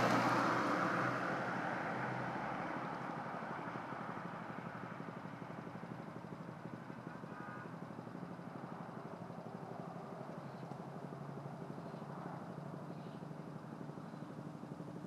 Moss Lane
grass cutting machine, cars, birds, bicycle
30 September 2010, 16:07